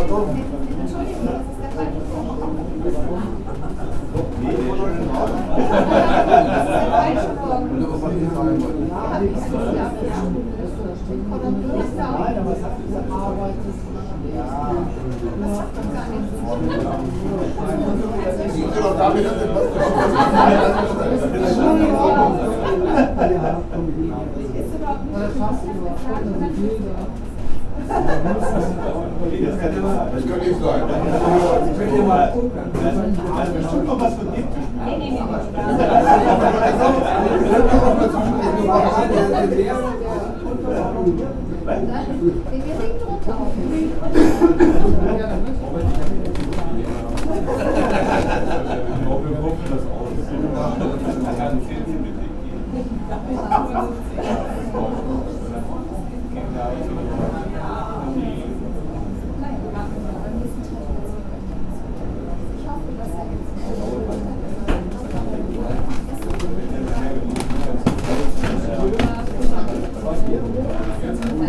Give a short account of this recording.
broyhanhaus, kramerstr. 24, 30159 hannover